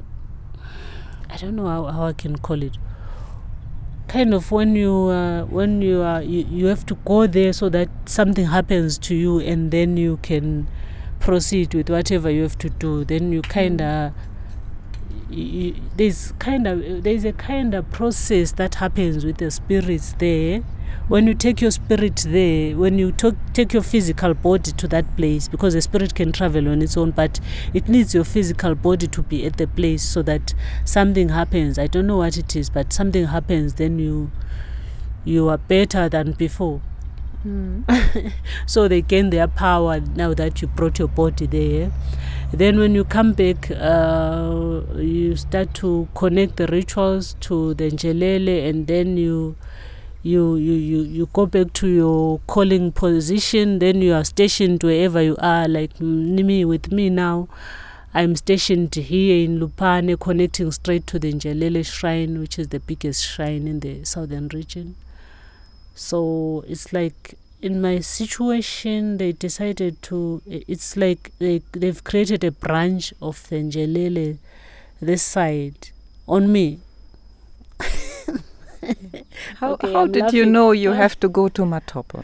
Lupane, Zimbabwe - Learning about rainmaking and birds in Binga...
...i asked Gogo in particular to tell us about her recent research in Binga that she had mentioned to the night before.... Thembi discovered that the geographically and historically closest rain-maker to her area in Lupane had lived in Binga... she went for a two-weeks research to Binga, and got to know the story of Maalila. He used to perform rain-making rituals at Binga’s hot springs until the Zimbabwe government and National Parks claimed the land as private property. Based on Gogo’s research, a thirteen-episode TV series about Maalila was developed and produced in Binga...
Thembi Ngwabi now better known as Gogo (Ugogo means granny in Ndebele) describes her transformation from a young creative woman grown up in town (Bulawayo), a dancer, bass-guitarist, director of plays and films and of the Amakhosi Performing Arts Academy to a rapidly-aged, traditional rain-dancer in the Lupane bushland…